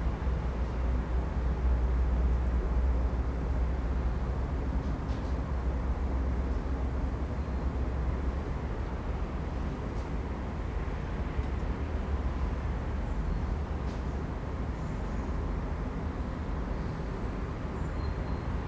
вулиця Петропавлівська, Київ, Украина - Morning in Kiev
Как просыпается спальный район столицы Украины